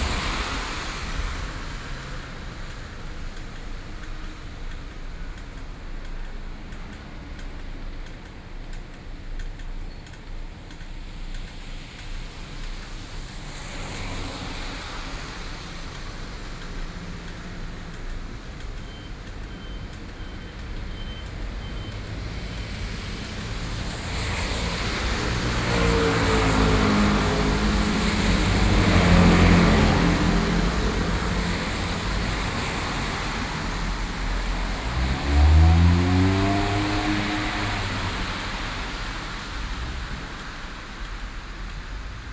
Beitou, Taipei - rainy day
Traffic noise, Sony PCM D50 + Soundman OKM II